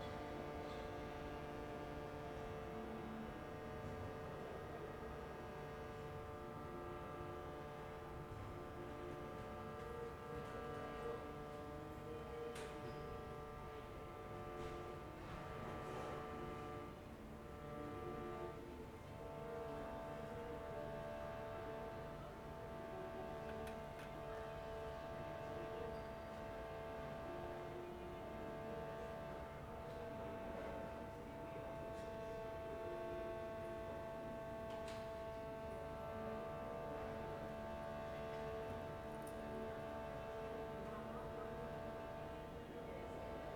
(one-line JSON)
{"title": "Ascolto il tuo cuore, città, I listen to your heart, city. Several chapters **SCROLL DOWN FOR ALL RECORDINGS** - Night with Shruti box in background in the time of COVID19 Soundscape", "date": "2020-05-12 22:43:00", "description": "\"Night with Shruti box in background in the time of COVID19\" Soundscape\nChapter LXXIV of Ascolto il tuo cuore, città. I listen to your heart, city\nTuesday May 12th 2020. Fixed position on an internal terrace at San Salvario district Turin, fifty two days after emergency disposition due to the epidemic of COVID19.\nStart at 10:43 p.m. end at 11:07 p.m. duration of recording 23’52”", "latitude": "45.06", "longitude": "7.69", "altitude": "245", "timezone": "Europe/Rome"}